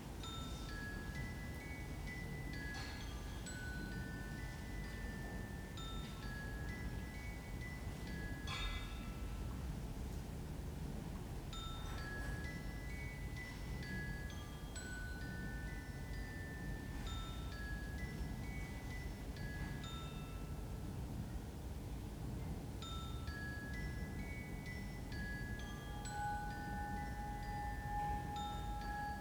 Hiddenseer Str., Berlin, Germany - Accidental Spaghetti Western soundtrack through the window
An uplifting surprise. Unknown, but tuneful, phone chimes through an open window together with the heating system tone and even someone whistling briefly. Accidental ingredients for a Spaghetti Western soundtrack suddenly come together just outside my window. All enveloped in the quiet air blast of the heaters still in operation even though it's 27 degrees C. Am instantly reminded of Ennio Morricone and the chimes in the shootout scenes for 'A Few Dollars More' and 'The Good, the Bad and the Ugly'. His was one of two musical deaths that have touched me greatly in the last days. The other was Peter Green. All my thanks and best wishes to both of them.